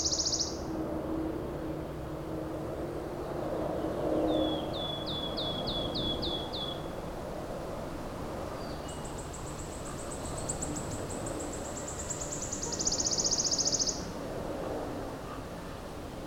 Alléves, France - Pouillot siffleur
Le paysage sonore en sortant de la grotte de Banges, un pouillot siffleur en solo, grand corbeau, un peu de vent dans les feuillages et les bruits de la route des Bauges.
Auvergne-Rhône-Alpes, France métropolitaine, France, 9 June 2004